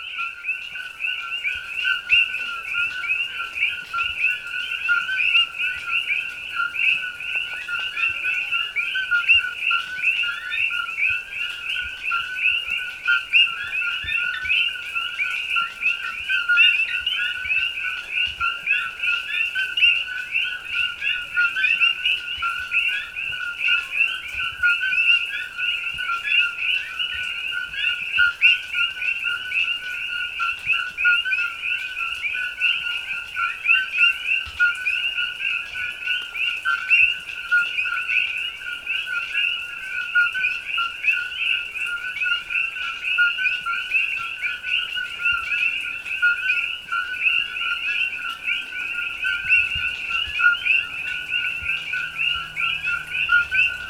At dusk, the Coqui frogs — an invasive species from Pure Rico — begin peeping. It’s the males who make this sound. They make a low ‘CO’ then a high pitched ‘QUI!’ at a fairly earsplitting volume (>100dB). The ‘CO’ means, “Hey other frog dudes, stay away, this is my turf” and the ‘QUI’ means, “Heyyyy ladies….” Recorded in MS stereo using an android phone and a zoom AM7 USB microphone / preamp.
9 June 2022, 20:00